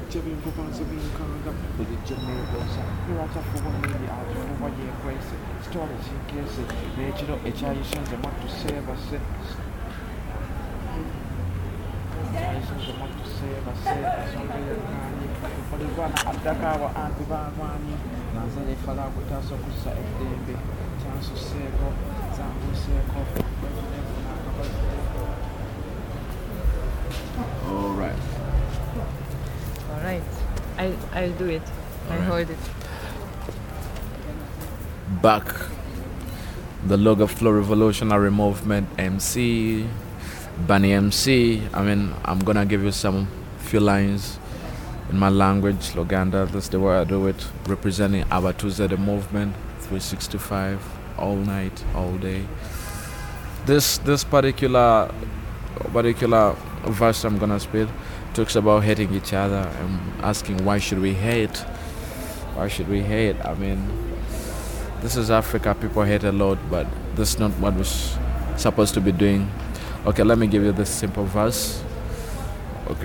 …we are sitting with Burney in front of the Uganda National Cultural Centre. Some events are going on, music, and many voices in the air… Burney MC has his sketch-book of lyrics with him and recites some of his verse to me … like this one from last night…
As an artist, Burney grew up in the Bavubuka All Stars Foundation and belongs to a group of artists called Abatuuze.